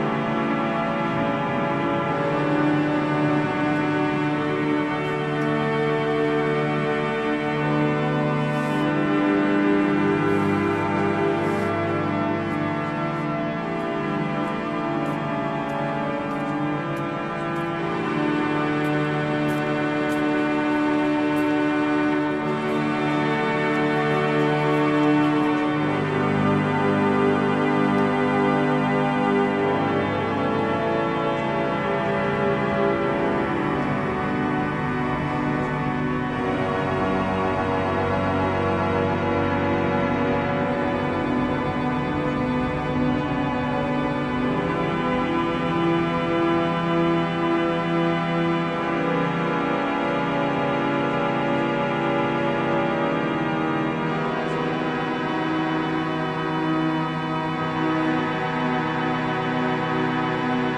Organ during a mass, recorded in the Catedral de Cádiz on September 14th 2008. The organ was in a terrible condition. So was the church. They placed nets to prevent stones from falling on the churchgoers.